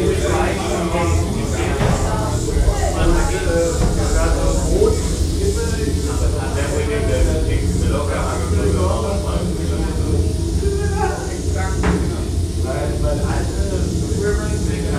berlin, manteuffelstraße: club - the city, the country & me: exibition of heiner weiss
field recordings and photo exibition of heiner weiss
the city, the country & me: march 22, 2015